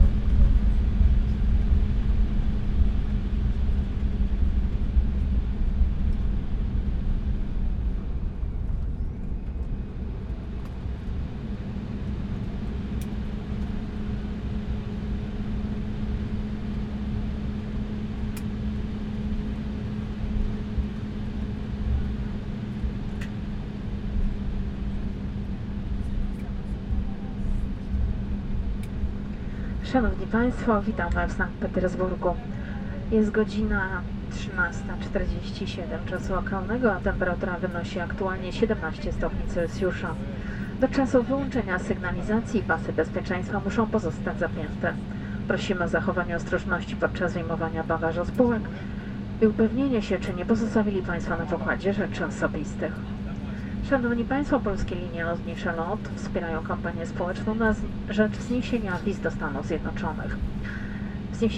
Pulkovo Airport, Sankt-Peterburg, Russia - (608e) Embraer 170s landing
Binaural recording of Embraer 170's landing in Sankt-Petersburg.
recorded with Soundman OKM + Sony D100
sound posted by Katarzyna Trzeciak
Северо-Западный федеральный округ, Россия, 4 September 2019